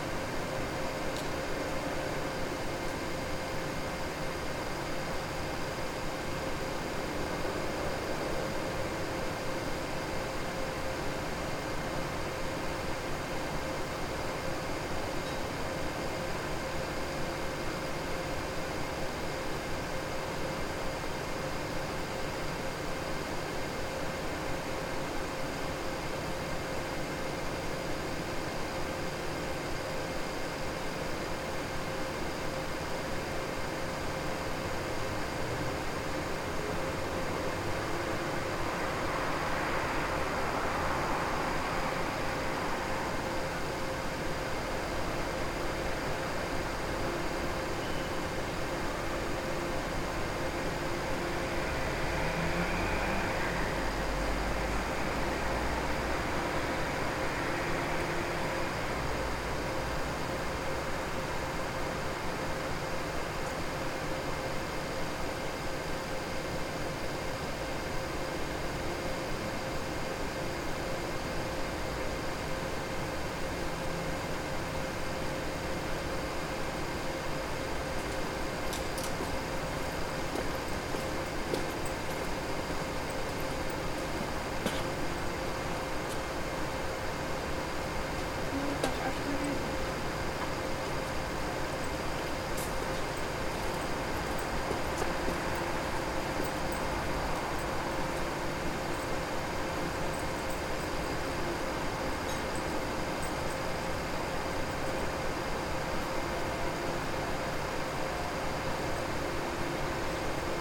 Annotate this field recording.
A recording of an almost empty Kaunas bus station platform in a late evening. Distant traffic and a nearby LED announcement board hum combines into a steady drone. Recorded with ZOOM H5.